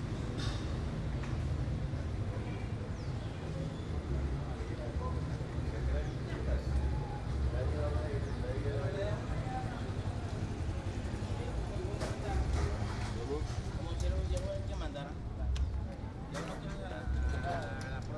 Apulo, Cundinamarca, Colombia - Apulo Marketplace
Sound-walk through Apulo's streets. The recording was taken the morning after the local feasts and a hangover silence or a tense stillness can be perceived on the audio file. The journey begins on a small shop, take us across a couple of commercial streets and finally arrives to the marketplace.
6 January